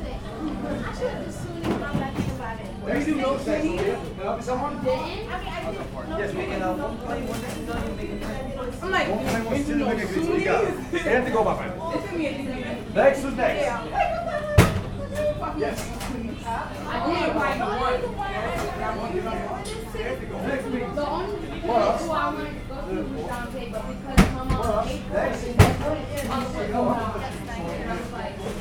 neoscenes: lunch at pizza place